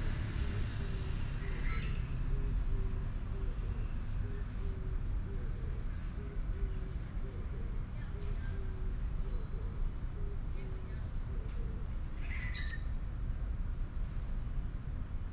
精忠新村, Hsinchu City - in the park
in the park, Bird call, traffic sound, Binaural recordings, Sony PCM D100+ Soundman OKM II
East District, Hsinchu City, Taiwan